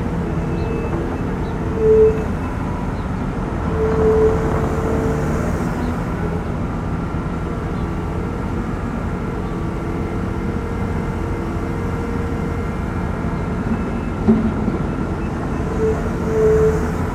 berlin: liberdastraße - the city, the country & me: construction site for a new supermarket
excavator disposing the debris of the demolished supermarket
the city, the country & me: march 6, 2012